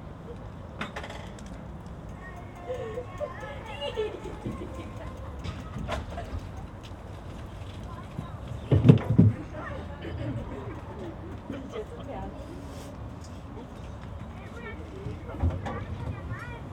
Spreeschloßstr., Oberschöneweide, Berlin - BVG ferry boat, jetty
the BVG ferry boat crosses the river Spree here each 20min., sunny sunday afternoon, many people with bikes.
(tech note: SD702, Audio Technica BP24025)